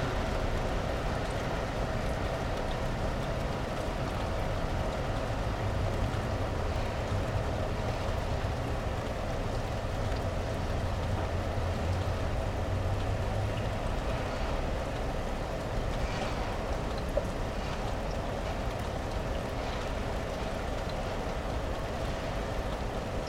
Dekerta, Kraków, Poland - (754 XY) Rooftop window atmo
Recording consists of automated opening a rooftop window, evening atmosphere, and closing back the window.
XY stereo recording made with Rode NT4 on Tascam DR100 MK3.